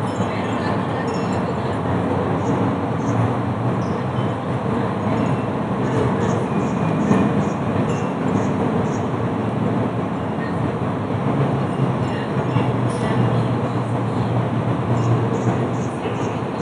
Cl. 119a ##57 - 35, Bogotá, Colombia - Wetland in Bogotá
Wetland in Bogota, this place three fundamental sounds like the wind, tree leaves and traffic. We can hear also some sound signs like hanging bells, bus brake, cars hitting the floor (metalik sound when the car jumps) a truck horn. Also for some sound marks, we can hear a few voices and birds
Región Andina, Colombia, 16 May 2021